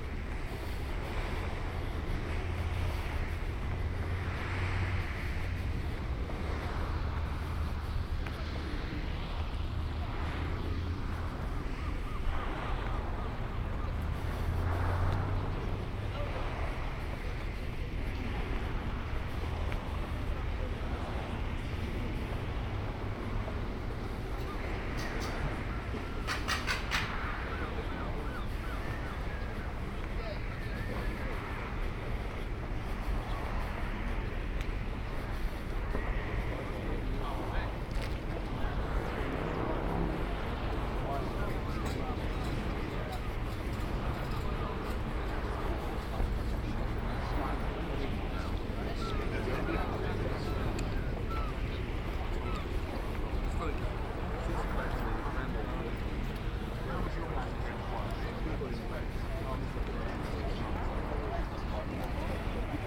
Harbour Approach Rd, Folkestone, Regno Unito - GG Folkestone-Harbour-A 190524-h14
Total time about 36 min: recording divided in 4 sections: A, B, C, D. Here is the first: A.
Folkestone, UK, 24 May 2019